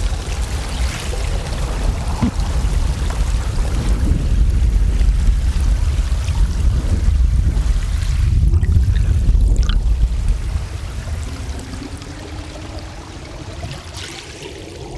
passage sous la cascade du troue noir avec un hydrophone
Reunion, 29 July 2010, 20:05